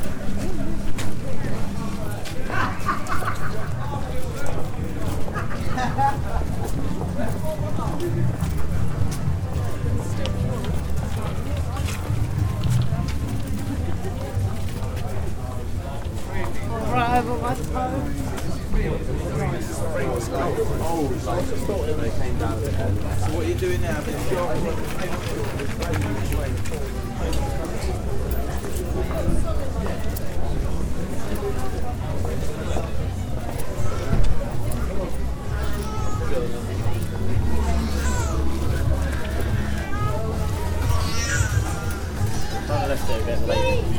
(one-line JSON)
{"title": "Upper Gardener Street/Kensington Market, Brighton", "date": "2010-02-21 16:32:00", "description": "A Saturday afternoon walk in the North Laines, Brighton. Northwards through the street market in Upper Gardener Street before turning right and right again and heading south down Kensington Gardens (which isn’t a garden but a street of interesting shops)…During my walk down Kensington Gardens I popped into the Crane Kalman Gallery to look at a series of Rock Photographs they have on dislay", "latitude": "50.83", "longitude": "-0.14", "altitude": "19", "timezone": "Europe/London"}